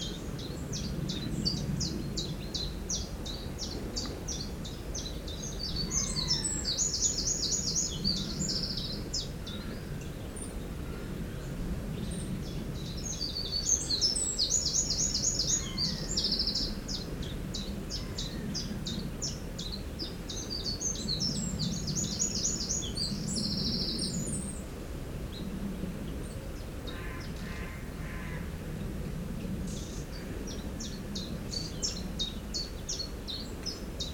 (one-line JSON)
{"title": "Anneville-Ambourville, France - Wren bird", "date": "2016-09-18 08:00:00", "description": "A wren bird is unhappy we travel by this way and sings loudly to spread us.", "latitude": "49.45", "longitude": "0.86", "altitude": "6", "timezone": "Europe/Paris"}